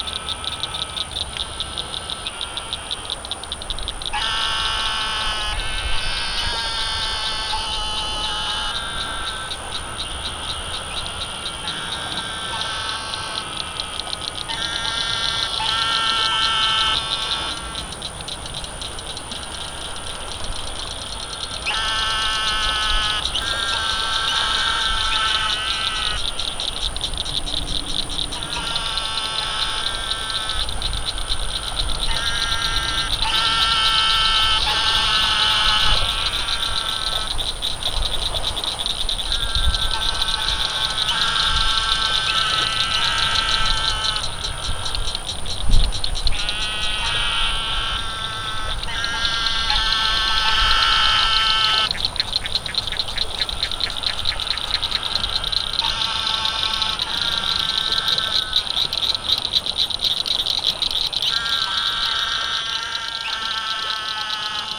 Bleaters and Clickers, Huntsville, TX, USA - Lakeside Frogs
Some of the amazing sounds we heard while hiking the Lone Star Trail through Sam Houston National Forest. It turned out to be a pretty challenging day for my gear with some pretty fierce wind penetrating my D50's Rycote windscreen and muddling up my recording a bit.
I was crouching in a bog-like area near the lake, listening to distant toads, when some much closer frogs and toads erupted with mass calls. The air traffic is pretty constant in this area but otherwise, I found the virtual silence I was looking for that day; a brief reprieve from the sometimes oppressive sounds of living in inner city Houston.
Recorded with a Sony PCM D50 and inadequate wind protection!